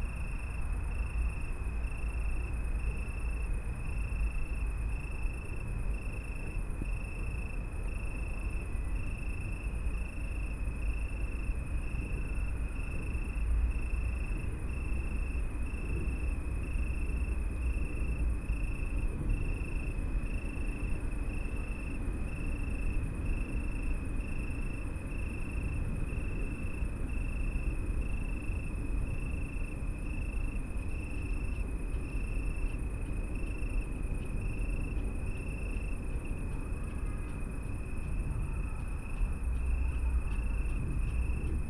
Stadtgarten, Köln - night ambience with crickets, jogger, train and churchbells
Köln, Stadtgarten, night ambience with crickets, jogger, train and 10pm churchbells
(Sony PCM D50, Primo EM172)
Köln, Germany